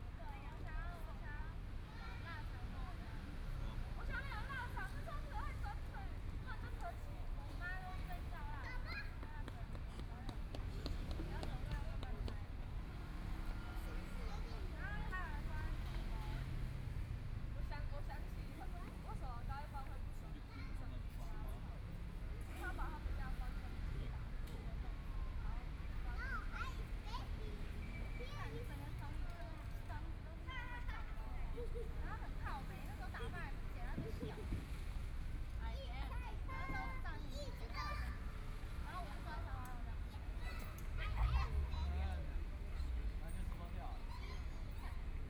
Shuangcheng St., Taipei City - Night in the park
Night in the park, Traffic Sound, Kids game noise, Voice chat among high school students
Please turn up the volume a little.
Binaural recordings, Zoom 4n+ Soundman OKM II
Shuāngchéng Street, 5號2樓, February 2014